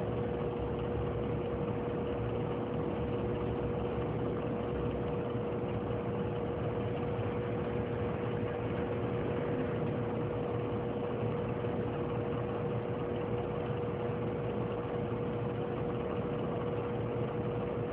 jefferson ave, brooklyn
bird singing and chirping various car-alarm-like-songs in brooklyn - with occasional street noises